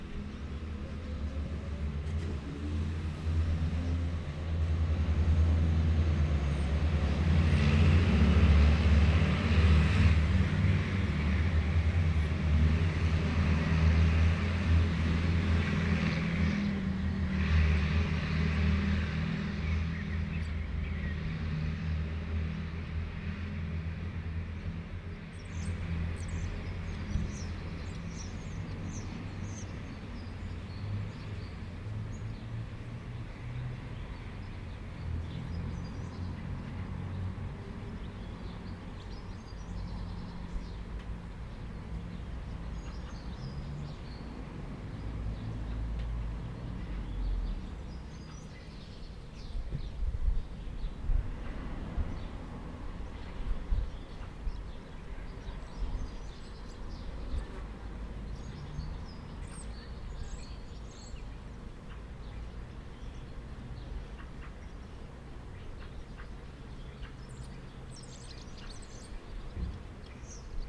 Bleialf, Deutschland - Auf dem ehemaligen Friedhof / On the former cemetery
Der ehemalige Friedhof ist heute ein kleiner Park mit Denkmal für die Gefallenen der beiden großen Kriege. Zu hören sind ein paar Fahrzeuge, die um den Park fahren, und ein paar Vögel.
The former cemetery is now a small park with a monument to the fallen of the two great wars. You can hear a couple of vehicles driving around the park and a few birds.